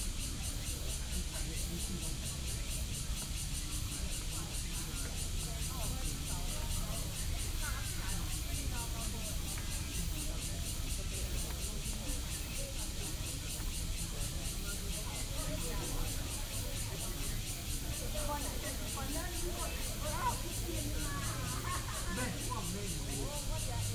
HutoushanPark - In the Park
Chat between elderly, Sony PCM D50 + Soundman OKM II